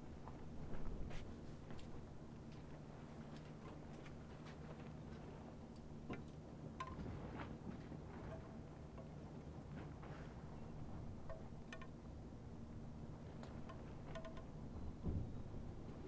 hummingbirds and bamboo windchimes flies and maybe a panting dog and more all serenade chinqi on this hot summer morn...
zoomh4npro